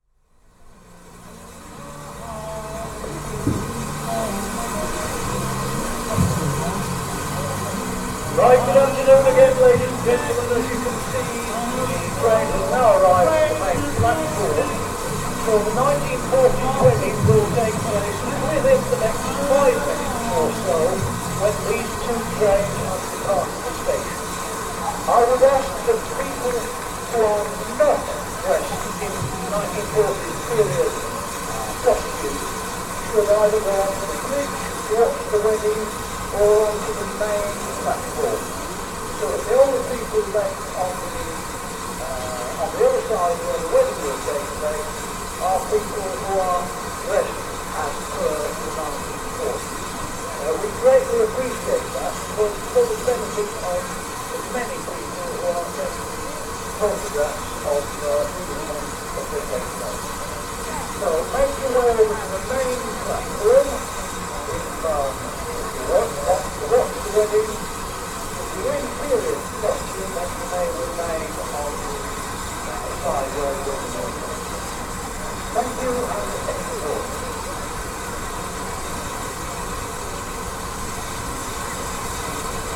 {"title": "Steam Event, Arley, Worcestershire, UK - Steam Event", "date": "2016-06-30 14:37:00", "description": "General sounds from a 1940s event at the Severn Valley Railway station at Arley.\nMixPre 3 with 2 x Beyer Lavaliers.", "latitude": "52.42", "longitude": "-2.35", "altitude": "48", "timezone": "Europe/London"}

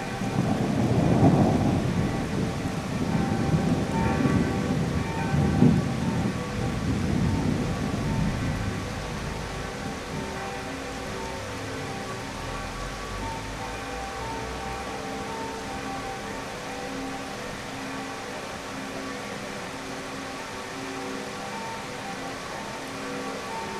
{"title": "Český Krumlov, Tschechische Republik - Soundscape Atelier Egon Schiele Art Centrum (1)", "date": "2012-08-02 18:00:00", "description": "Soundscape Atelier Egon Schiele Art Centrum (1), Široká 71, 38101 Český Krumlov", "latitude": "48.81", "longitude": "14.31", "altitude": "492", "timezone": "Europe/Prague"}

